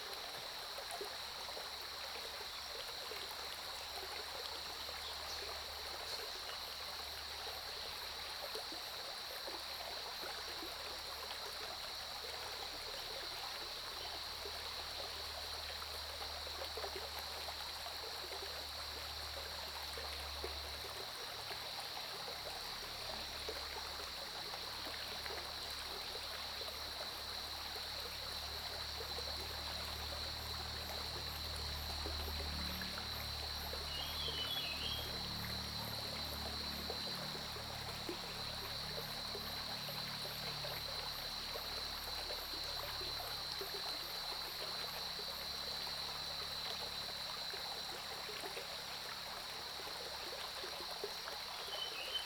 中路坑溪, 埔里鎮桃米里 - Bird calls and Stream
Early morning, Bird calls, Brook
Zoom H2n MS+XY
12 June 2015, 05:39